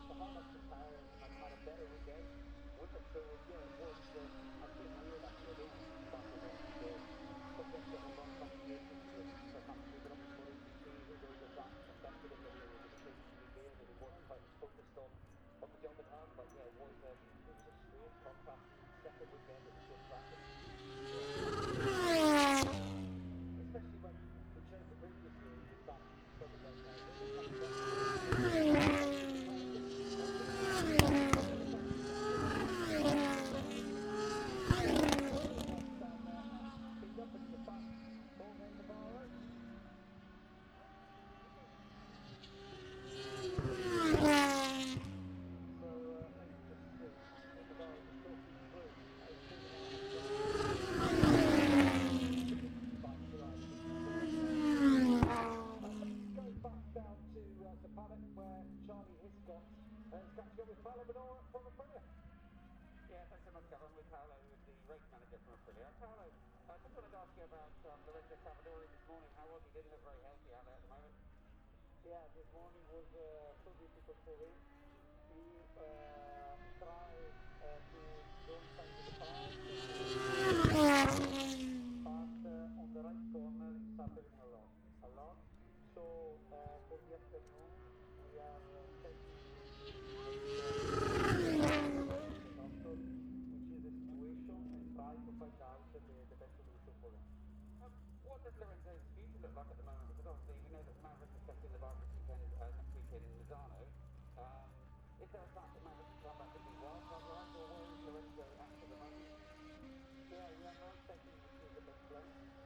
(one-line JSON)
{"title": "Silverstone Circuit, Towcester, UK - british motorcycle grand prix ... 2021", "date": "2021-08-27 10:55:00", "description": "moto two free practice one ... maggotts ... dpa 4060s to MixPre3 ...", "latitude": "52.07", "longitude": "-1.01", "altitude": "158", "timezone": "Europe/London"}